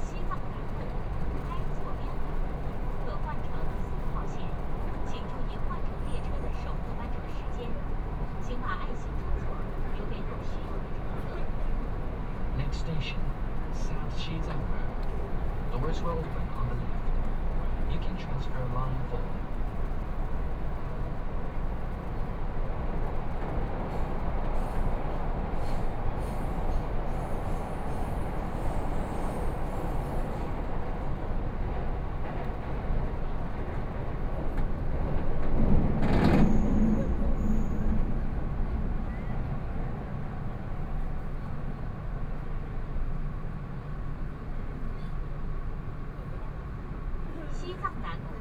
from Laoximen Station to South Xizang Road Station, Binaural recording, Zoom H6+ Soundman OKM II
Shanghai, China, 3 December